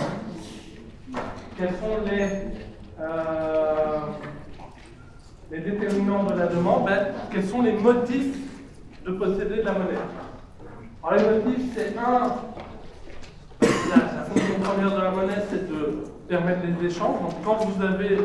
Centre, Ottignies-Louvain-la-Neuve, Belgique - A course of economy
In the big Agora auditoire, a course of economy.
Ottignies-Louvain-la-Neuve, Belgium, 11 March, 14:15